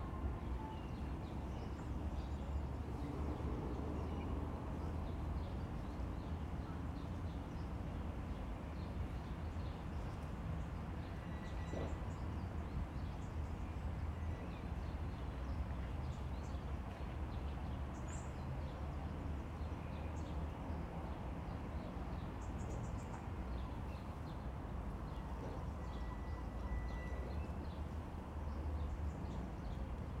Rijeka, Croatia, Natural History Museum - Natural History Museum 01
Primorsko-Goranska županija, Hrvatska, 1 April, ~17:00